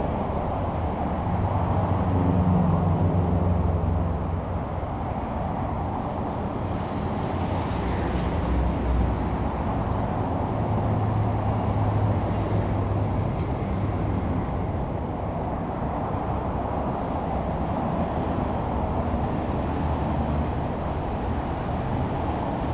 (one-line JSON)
{"title": "Peiying Rd., Zhongli Dist. - Next to the highway", "date": "2017-08-02 14:43:00", "description": "Next to the highway, traffic sound\nZoom H2n MS+XY", "latitude": "24.98", "longitude": "121.23", "altitude": "118", "timezone": "Asia/Taipei"}